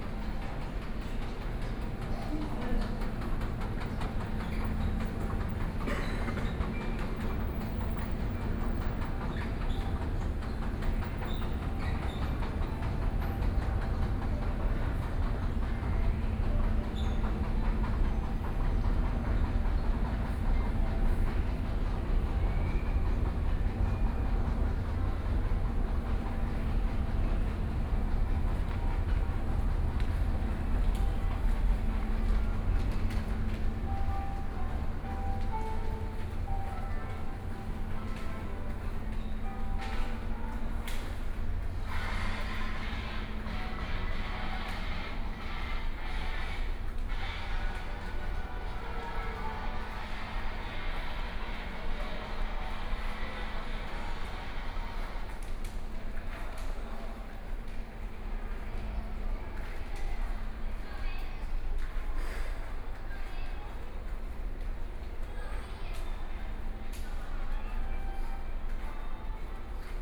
Hualien Station, Hualien City - Walking in the station
Walking in the station, From the station hall, Through the underpass, Then toward the station platform
Hualian City, Hualien County, Taiwan, 29 August 2014, ~12:00